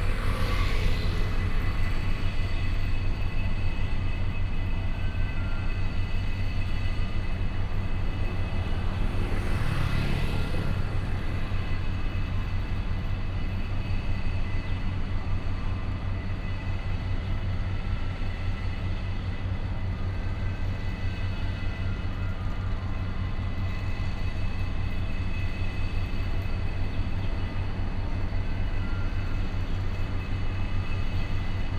Building Construction, Next to the construction site, birds, traffic sound